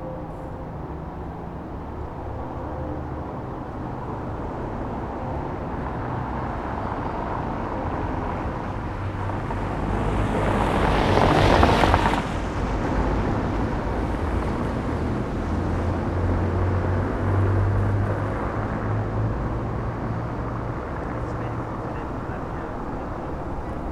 Berlin: Vermessungspunkt Friedel- / Pflügerstraße - Klangvermessung Kreuzkölln ::: 24.12.2011 ::: 14:58